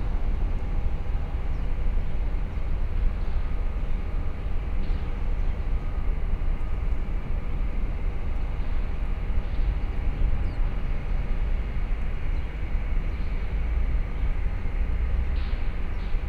ambiance at the freight train terminal, sounds from the nearby thermo-electrical power station.
(Sony PCM-D50, DPA4060)